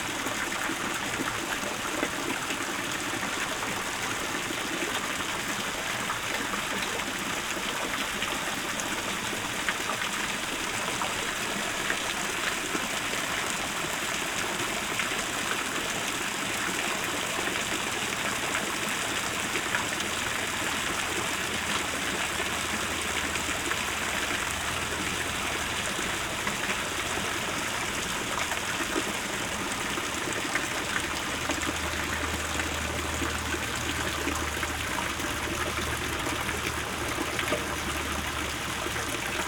Von-der-Schulenburg-Park, Berlin, Deutschland - Märchenbrunnen
Märchenbrunnen (fairy tale fountain) at Von-der-Schulenburg-Park, Neukölln, Berlin
Berlin, Germany